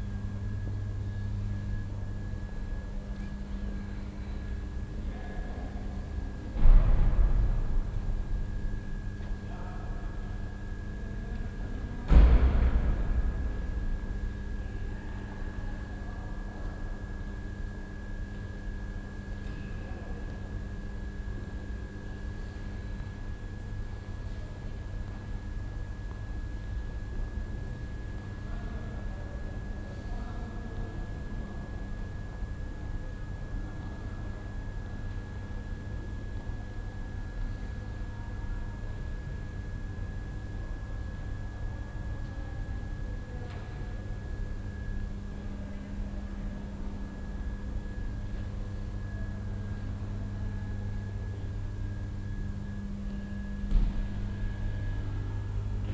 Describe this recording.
in der kraftzentrale auf dem duisburger zechengelände, riesige, leere industriehalle, zwei arbeiter beschäftigen sich mit einem 70er jahre fahrzeug, das brummen der hmi lampen, schritte, soundmap nrw, social ambiences/ listen to the people - in & outdoor nearfield recordings